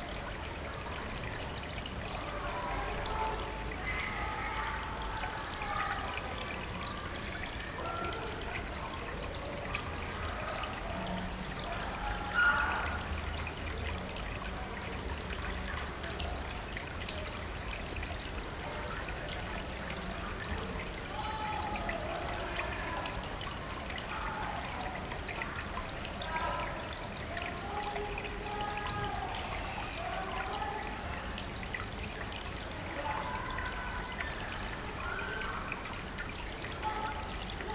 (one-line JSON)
{"title": "Stolbergallee, Paderborn, Deutschland - Über Wasser am Rothebach", "date": "2020-07-12 18:00:00", "description": "where\nyou are not supposed\nto go\nbut unter\nthe most beautiful play of\nwater and light\na bridge\nbetween\npleasure and pleasure\neven the dogs\nwon't notice you", "latitude": "51.73", "longitude": "8.75", "altitude": "108", "timezone": "Europe/Berlin"}